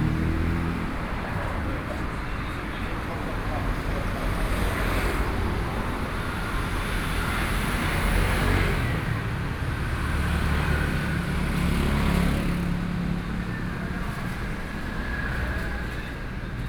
Sec., Zhonghua Rd., Taitung City - Fried chicken shop
Fried chicken shop on the roadside, Traffic Sound